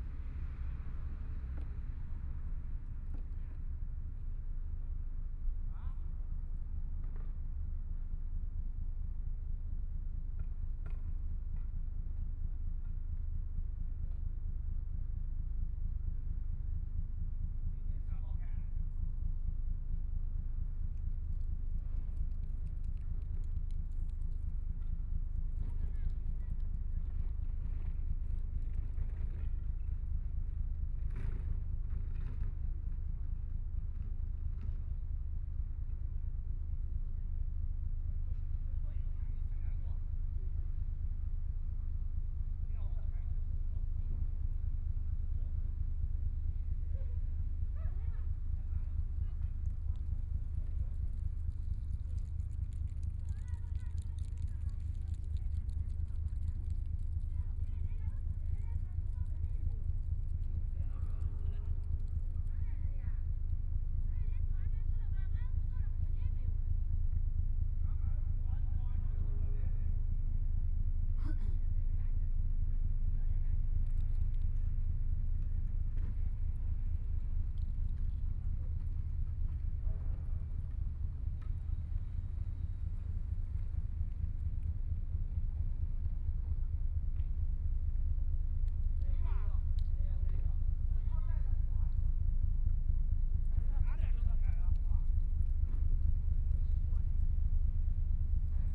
Sitting on the Bank of the river, The cleaning staff is the rest of the conversation sound, The river running through many ships, Binaural recording, Zoom H6+ Soundman OKM II